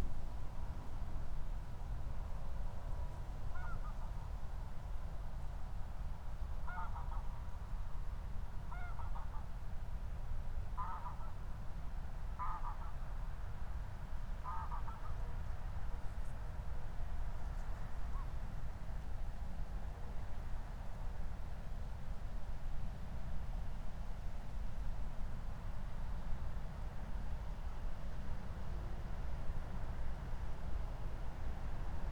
Moorlinse, Berlin Buch - near the pond, ambience
01:19 Moorlinse, Berlin Buch